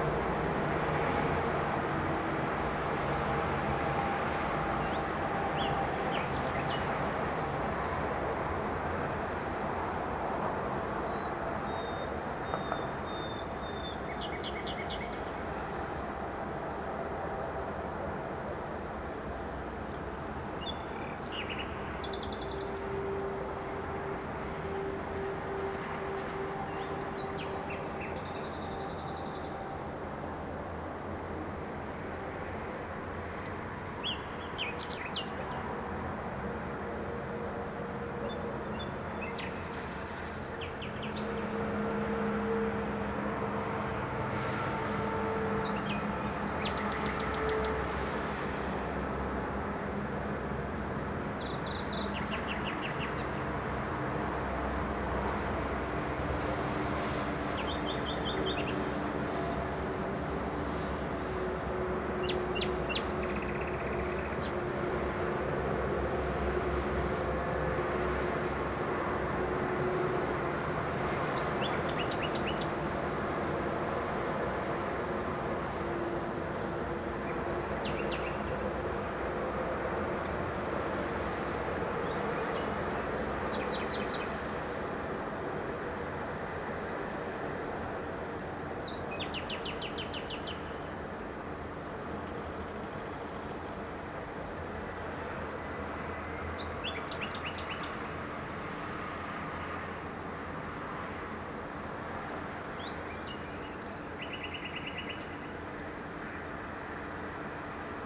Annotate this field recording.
Nightingale voice audible through traffic noise reflects on scene composed of concrete buildings sorrounding sports field. Recorded from 9th floor. Recorder Olympus LS11.